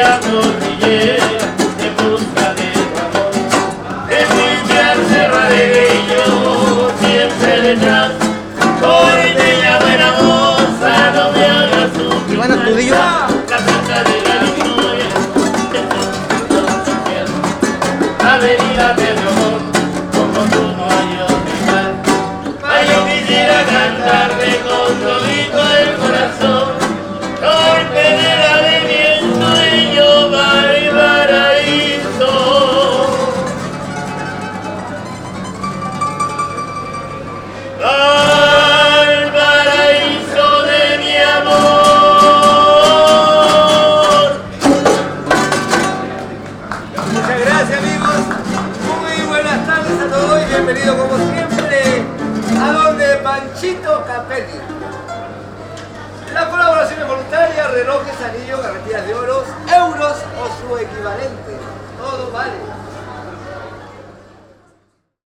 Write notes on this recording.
La joya del pacífico interpretada por el trío Dilema, Mercado El Cardonal